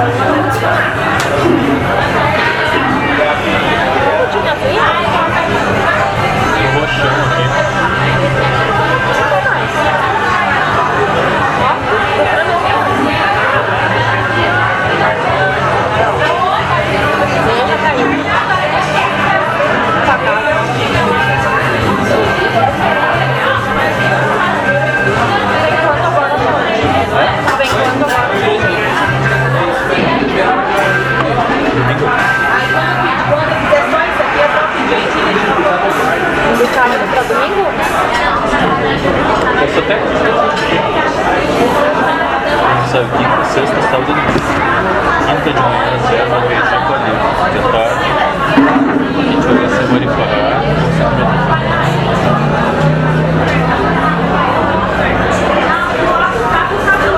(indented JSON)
{
  "title": "Palmas - TO, Brasil - Vernissage de uma exposição",
  "date": "2010-08-01 19:00:00",
  "description": "Sons de uma vernissage realizada em 2010 no Centro de Criatividade - Espaço Cultural de Palmas/Tocantins.",
  "latitude": "-10.20",
  "longitude": "-48.33",
  "altitude": "253",
  "timezone": "America/Araguaina"
}